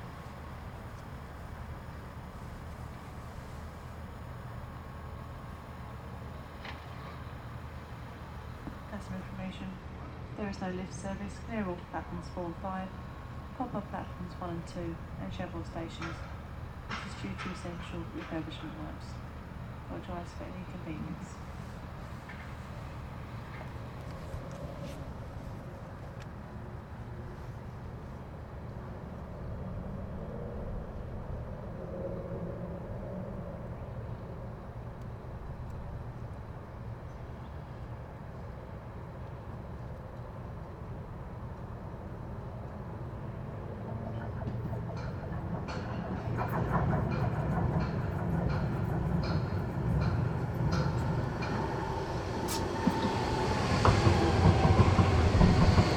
London Borough of Newham, UK - on Pudding Mill Lane train station